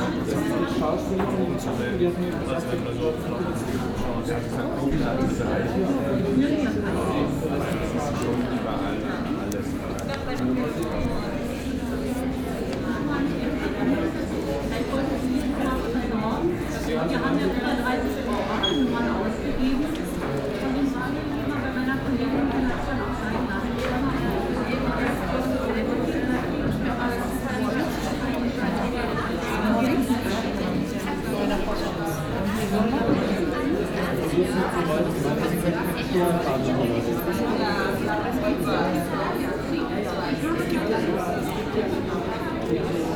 Charlottenburg, Berlin, Deutschland - wartenummer eins